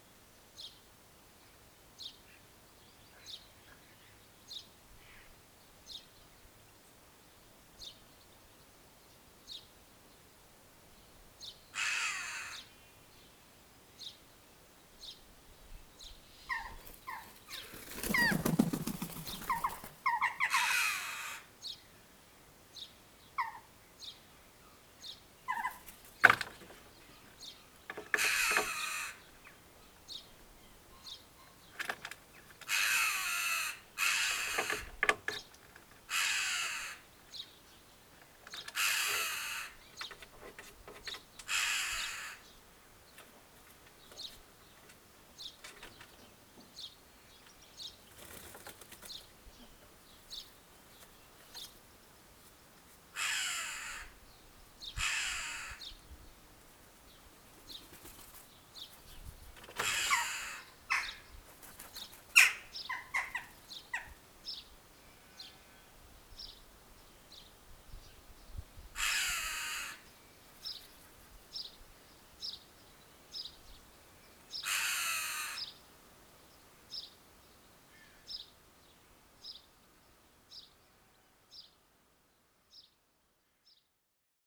Unnamed Road, Isle of Islay, UK - Domestic soundscape of choughs and jackdaws at Kilchoman Church
unattended overnight recording of choughs nesting in Kilchoman Church, Islay. You can hear the wing beats, calls and footsteps as they go about their domestic business. I used Roland CS 10EM as a spaced pair to an Olympus LS-5. This is a short clip from the whole recording. No editing except extraction and fade out.
May 30, 2018
Since first posting this I have changed the recording title on the advice of a knowledgeable friend, Simon Elliott of the WSRS who says this is "a chough calling in the background (possibly juvenile, although young jackdaws can sound surprisingly choughy and I've been caught out before on Colonsay). I suspect that all the near-field stuff - definitely the calls, but also wings and footfalls are from jackdaw."